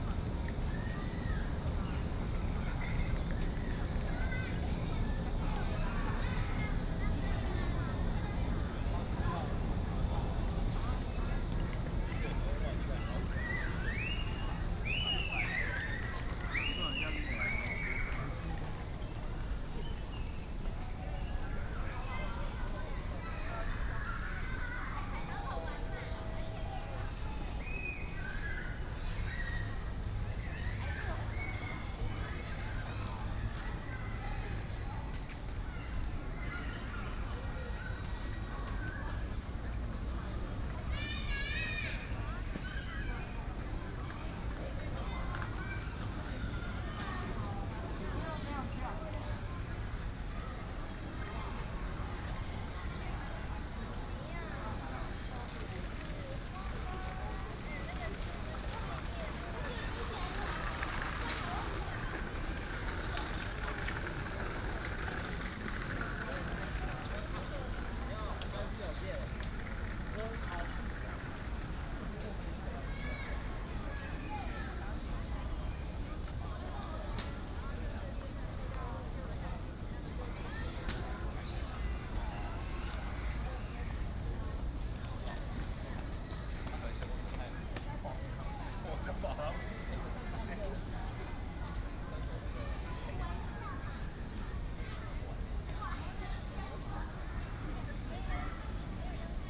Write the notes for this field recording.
In the Plaza, Holiday Many tourists, Very hot weather